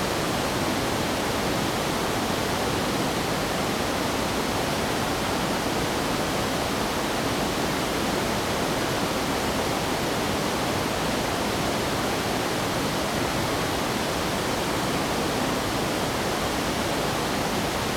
Pubu, 烏來里, Wulai Dist., New Taipei City - Waterfalls and rivers
Facing the waterfall, Traffic sound, Birds call, Waterfalls and rivers
Zoom H2n MS+ XY